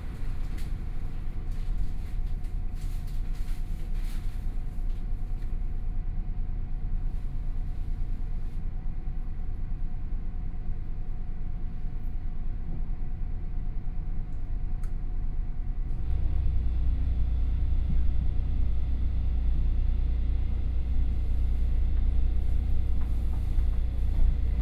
Wuri District, Taichung - Local Express
from Taichung Station to Wuri Station, Zoom H4n+ Soundman OKM II
8 October 2013, ~12:00